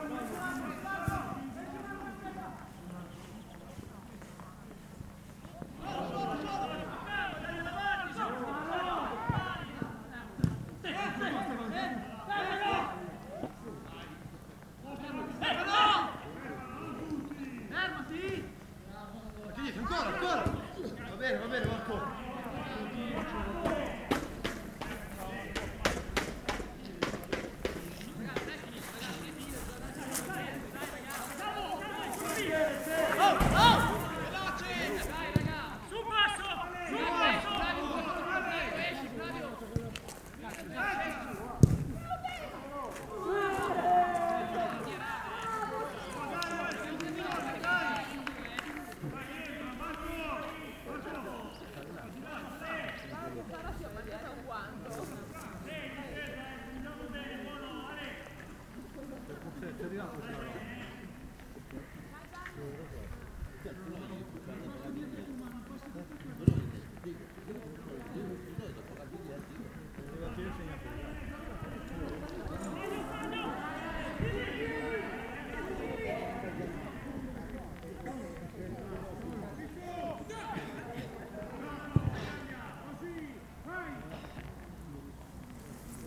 Linarolo, Italy - football match
local football match under light rain on a cold Sunday afternoon. nearly nobody is watching. Drops of rain from the tennis camp marquee
Province of Pavia, Italy